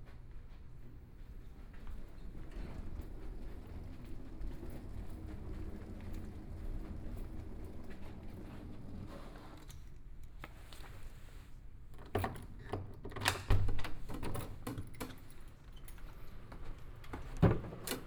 Hotel Kingdom, Kaoshiung City - In Hotel
In Hotel, In the hotel lobby
Binaural recordings
Yancheng District, Kaohsiung City, Taiwan, 13 May, ~20:00